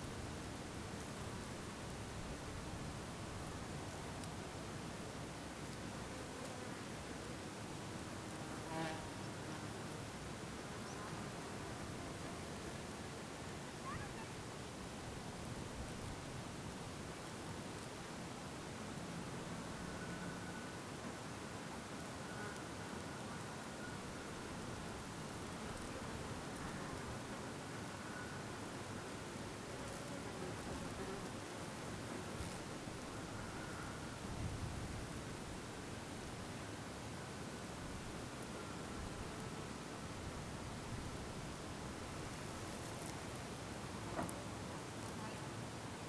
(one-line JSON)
{"title": "Knockfennell, Co. Limerick, Ireland - Knockfennel summit", "date": "2013-07-18 15:15:00", "description": "We finally made it all the way up to the summit of Knockfennel. Here, mainly wind and buzzing insects can be heard. Some birds can be heard in a distance, below the hill. The visual view and the acouscenic soundscape is breathtaking.", "latitude": "52.52", "longitude": "-8.53", "altitude": "147", "timezone": "Europe/Dublin"}